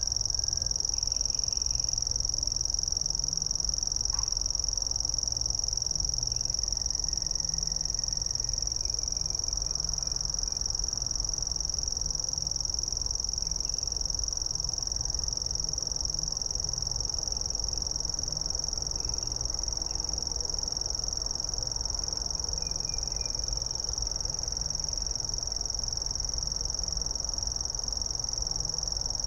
Großer Zingerteich, Blankenfelde, Berlin, Deutschland - Zwischen Kleiner und Großer Zingerteich, 23 Uhr
Zwischen Kleiner und Großer Zingerteich